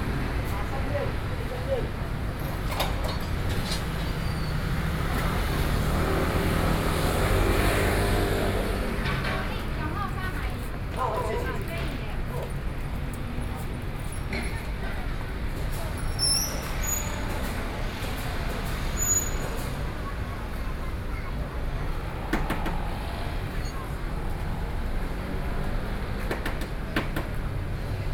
Lianyun St., Zhongzheng Dist., Taipei City - Around the corner